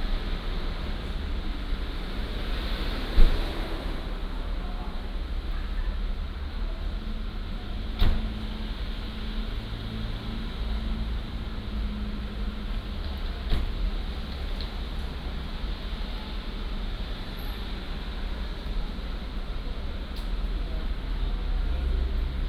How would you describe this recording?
Close the door, Traffic sound, Outside the station, Very much docked vehicles